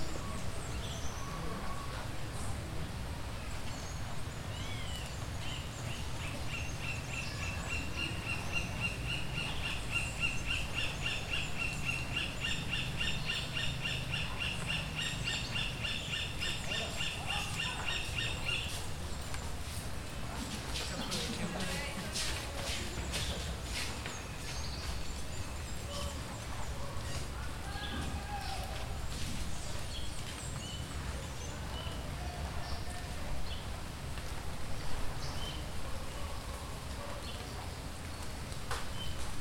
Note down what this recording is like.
GRABACION STEREO, TASCAM DR-40 REALIZADO POR: JOSÉ LUIS MANTILLA GÓMEZ.